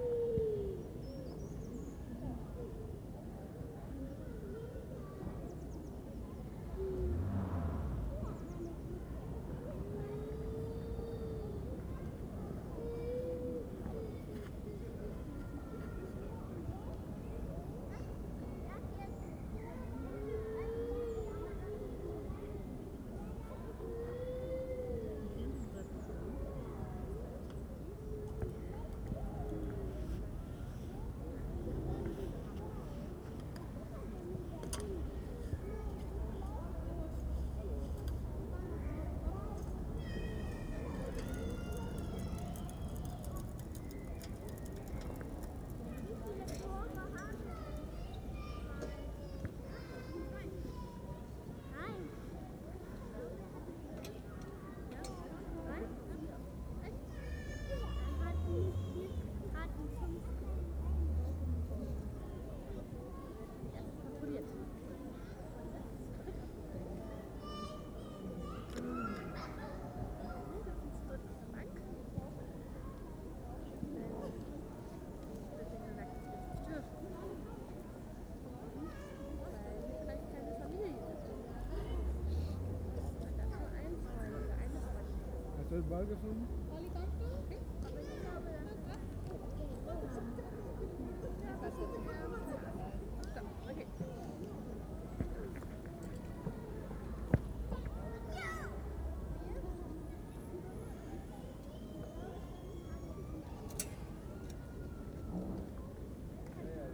Palace Park, Am Schloßpark, Berlin, Germany - 3 days of lockdown; park in bright sun, slightly less cold
Slightly less cold but not so much different from the day before. More adults exercising or walking with a friend. No planes and still few birds singing, except wood pigeons and a nuthatch. One women 'omms' softly while during her slow yoga movements. 50 meters away 3 teenage girls sit together on a bench. I watch as a police car stops and 3 officers walk across the grass to speak to them. Identity cards are checked. Verbal authority is applied and one of the girls gets up to move to a more distant seat. It's first time I've seen the coronavirus rules being enforced. Noticeable that when the police return to their car they do not keep 1.5m from each other!
24 March 2020, ~15:00